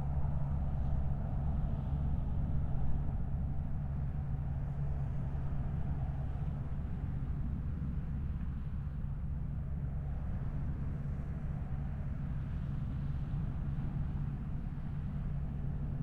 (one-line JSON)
{"title": "Poste-de-secours-Piemansons-Plage, Camargue, Arles, Frankreich - The sea and an army helicopter", "date": "2021-10-19 13:40:00", "description": "At this time of the year only few people around. Some fishermen (the dog of one can be heard). An army helicopter passing overhead, probably on patrol along the coastline. Binaural recording. Artificial head microphone set up in the windshade of the Poste-de-secours building. Microphone facing west. Recorded with a Sound Devices 702 field recorder and a modified Crown - SASS setup incorporating two Sennheiser mkh 20 microphones.", "latitude": "43.35", "longitude": "4.78", "altitude": "1", "timezone": "Europe/Paris"}